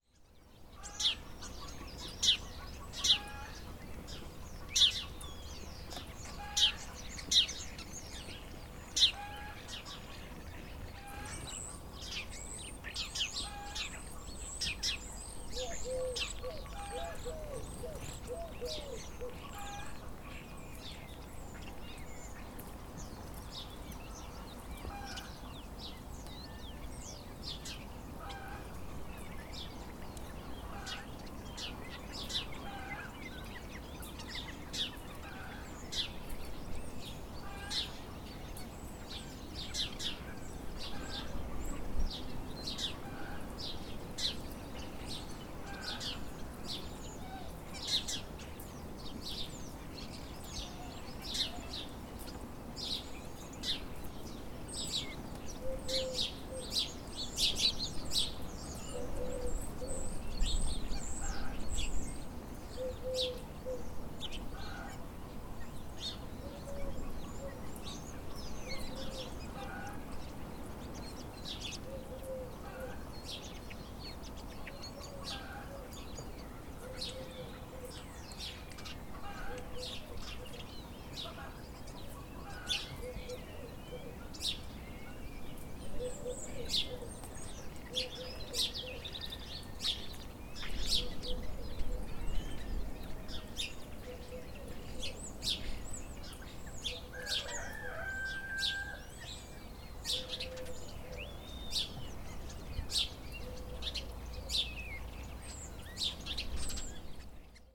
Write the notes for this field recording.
A bit windy but the ambience is there. Lots of starlings.